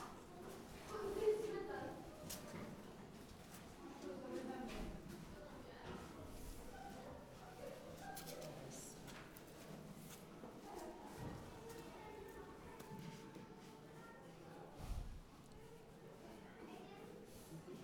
feeding a horse at its stall. a few snaps of its jaw and chewing are audible. kids excited about the presence of the animal as well as its behavior. as i was holding the recorder right in front of the horse muzzle they though i was interviewing the animal.
Szreniawa, Poland, 27 April 2013, 15:09